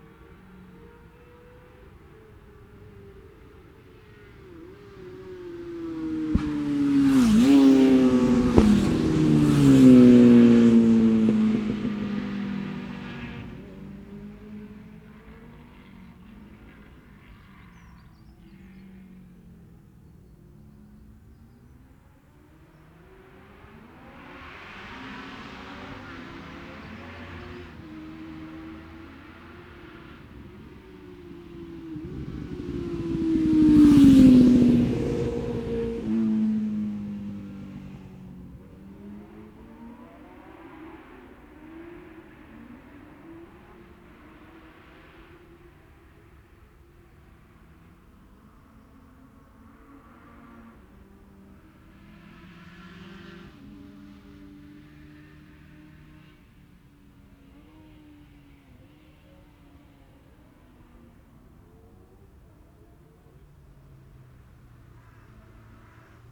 Scarborough UK - Scarborough Road Races 2017 ... classic superbikes ...
Cock o' the North Road Races ... Oliver's Mount ... Classic Racing Machines practice ...